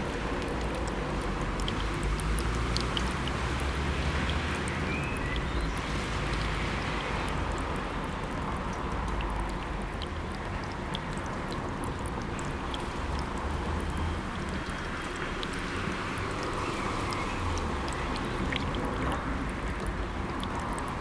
Wojska Polskiego / Mickiewicza - Storm is over, water lasts.
Worm, sunny day. Short, strong storm. House on the corner. Downpour residue dripping from the roof into the gutters.
Zoom h4n fighting his next battle with moisture.